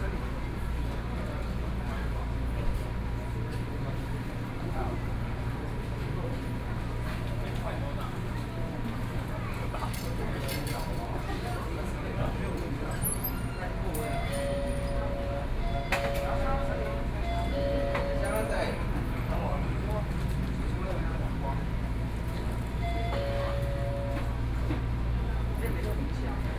Zhongli Station, Taoyuan County - in the Station hall
in the Station hall, Sony PCM d50+ Soundman OKM II